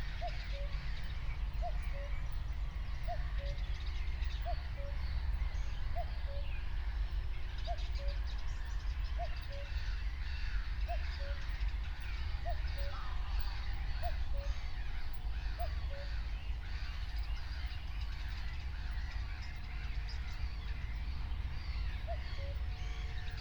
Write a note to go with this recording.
04:00 Berlin, Buch, Moorlinse - pond, wetland ambience